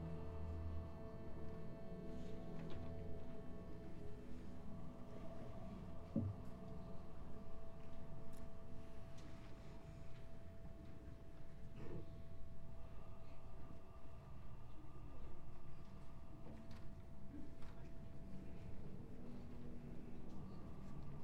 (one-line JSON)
{"title": "Favoriten, Wien, Austria - Kunst Am Bau", "date": "2017-01-23 18:40:00", "latitude": "48.19", "longitude": "16.38", "altitude": "203", "timezone": "GMT+1"}